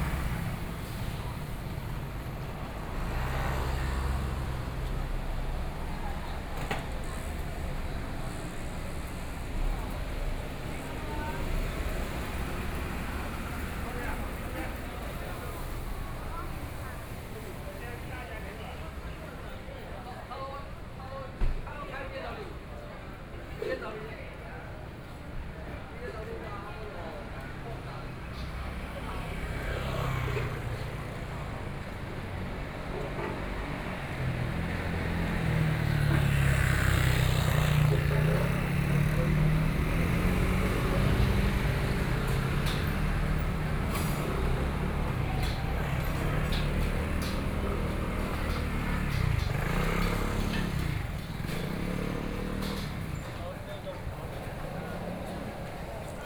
Xinshi St., Taipei City - soundwalk
Traffic Noise, The night bazaar, Binaural recordings, Sony PCM D50 + Soundman OKM II